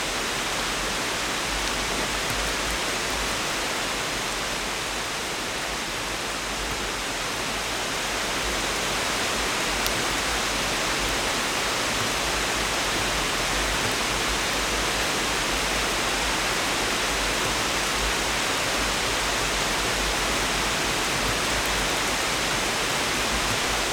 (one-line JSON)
{"title": "E Cherokee Dr Youngstown, Ohio - Summer Thunder", "date": "2020-07-11 09:00:00", "description": "I'm visiting my parents during the Covid-19 pandemic, sleeping in my childhood bedroom which has a porch off the back that faces Mill Creek Park. I loved listening to thunderstorms as a kid. So when one started, I set up my shotgun Mic and hit record. I believe I also caught the call of a Great Horned Owl and a bird I don't know.", "latitude": "41.08", "longitude": "-80.69", "altitude": "305", "timezone": "America/New_York"}